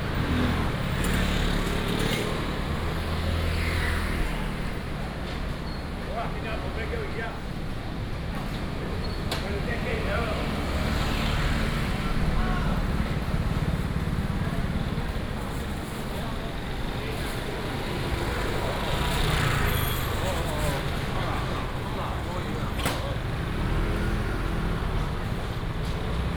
Zhongzheng 3rd St., Taoyuan Dist. - Fruit and vegetable wholesale market area
walking in the Fruit and vegetable wholesale market area, traffic sound
Taoyuan City, Taiwan